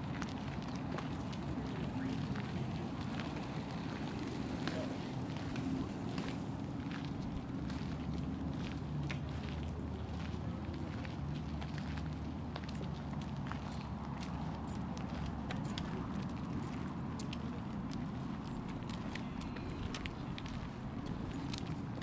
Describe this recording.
Part three of a soundwalk on July 18th, 2010 for World Listening Day in Greenlake Park in Seattle Washington.